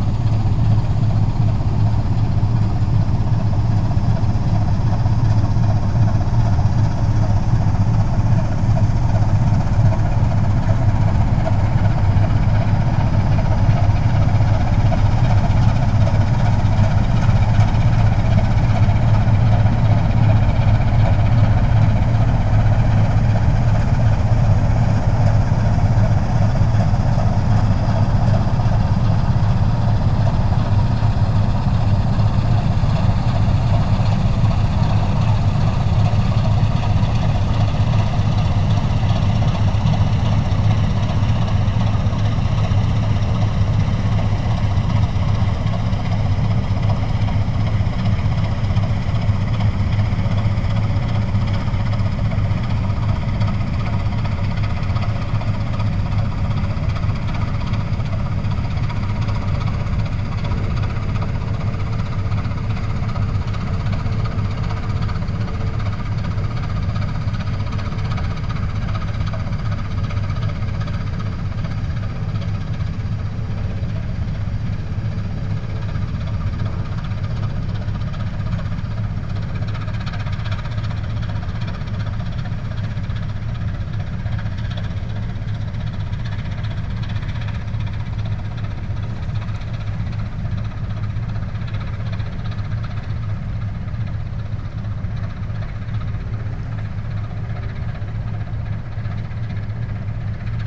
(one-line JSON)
{"title": "Hermann-Schneider-Allee, Karlsruhe, Deutschland - cargo ship upstream - Frachter bergwärts", "date": "2011-11-02 15:00:00", "description": "A sunny afternoon near the river Rhine.\nEquipment: Tascam HD-P2; AKG SE 300B / CK91\nRecording: ORTF", "latitude": "49.00", "longitude": "8.29", "altitude": "106", "timezone": "Europe/Berlin"}